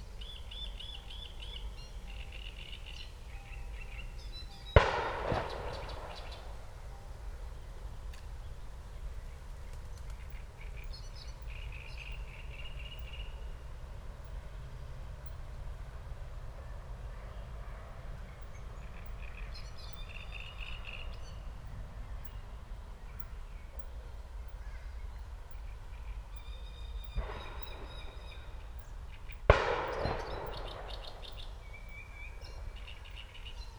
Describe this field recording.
Leisure park and nature reserve, Great reed warbler and shots from the nearby shooting range, distant churchbells, (Sony PCM D50, DPA4060)